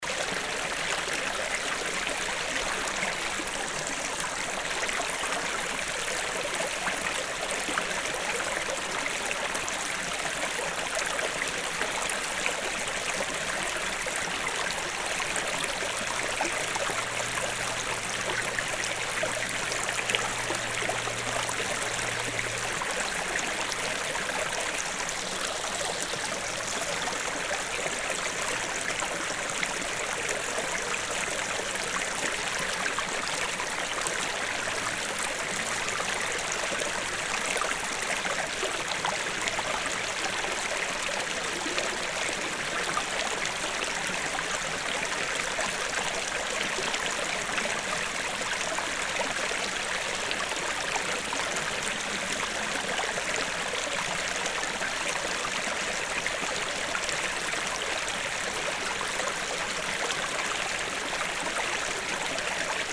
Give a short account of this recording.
A second recording of the small stream Schlänner, here at a different place in the valley. Hoscheid, kleiner Fluss Schlänner, Eine zweite Aufnahme vom kleinen Fluss Schlänner, hier an einer anderen Stelle des Tals. Hoscheid, petit ruisseau Schlänner, Un deuxième enregistrement du petit ruisseau Schlänner, pris à un autre endroit dans la vallée. Projekt - Klangraum Our - topographic field recordings, sound objects and social ambiences